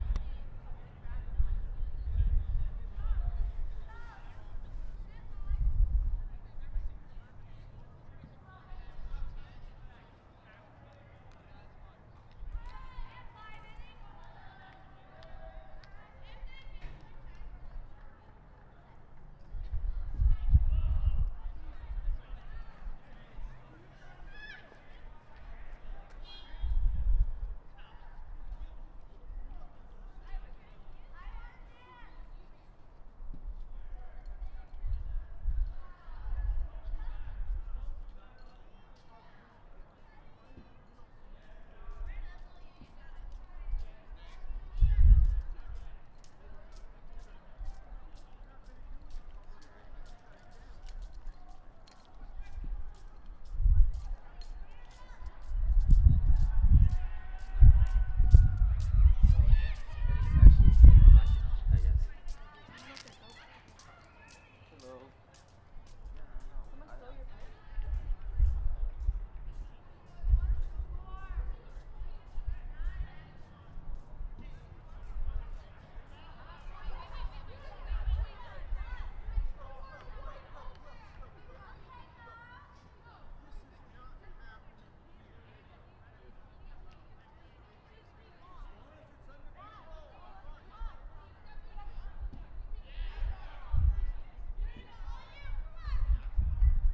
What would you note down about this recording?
Outside South Hall North Entrance. Set on top of the short wall dividing the bike storage from the pathway. Facing West towards South Hall Quad. Sunny and warm out. Placed about 4ft off the ground on a small tripod. No dead cat used.